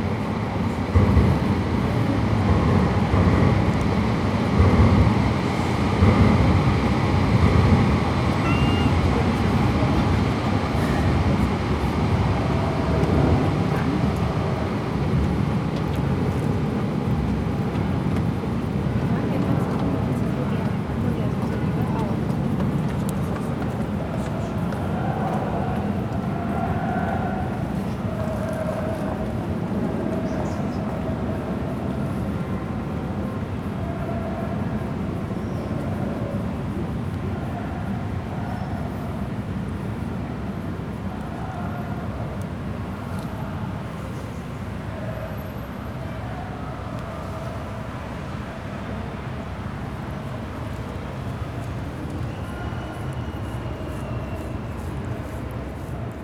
Porto, Calcada Serra - stray cat
city ambience on one of the streets of south Porto. cheering cry of visiting soccer fans reverberate among the houses. tram rattles on a bridge above. a cat comes along, demands to be pet.